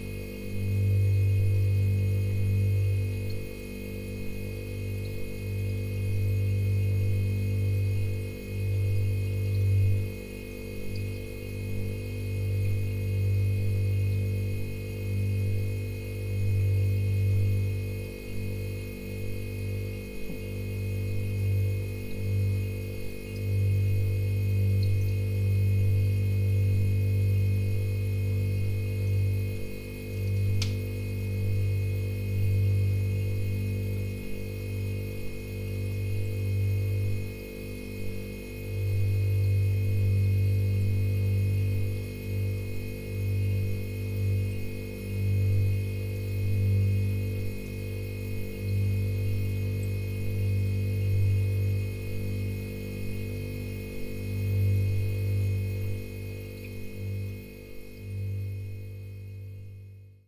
Poznan, Windy Hill district, kitchen - refrigerator
i placed the recorder behind the fridge. nice layers of sounds, different ringing sounds, cracks of the casing, drops and flow of the cooling gas, and wonderful low end drone
Poznań, Poland